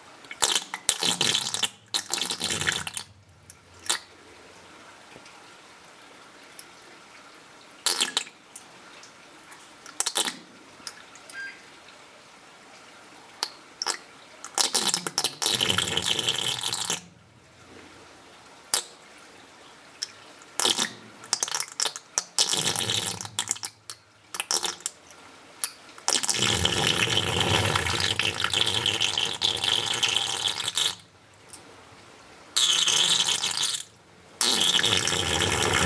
Volmerange-les-Mines, France - The whoopee pipe
In an underground mine, very far from everything, a whoopee pipe. Water is entering into the pipe and sometimes, air is getting out. This makes liquid farts. The bip you can ear is because we have to control air, this is mandatory because these places are dangerous.
26 May 2015